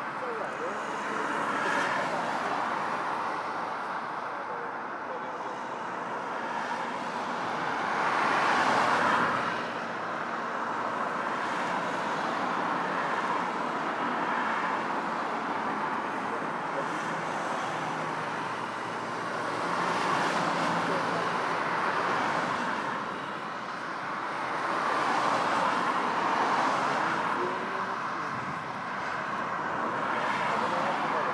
Manchester International Airport - Ringway Road
On Ringway Road, facing runway 23R.